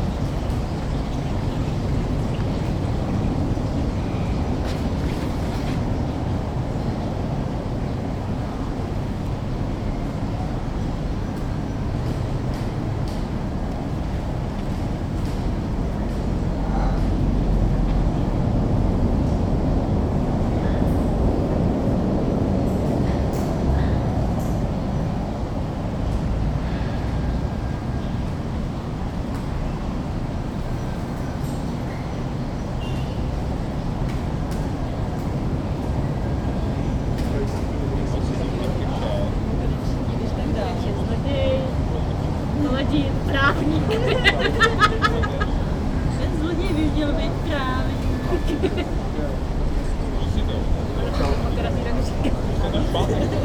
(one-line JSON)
{"title": "Botanicka zahrada, glasshouse", "date": "2011-04-11 14:47:00", "description": "interieur of the glass house of the botanical garden and the buffet nearby", "latitude": "50.07", "longitude": "14.42", "altitude": "202", "timezone": "Europe/Prague"}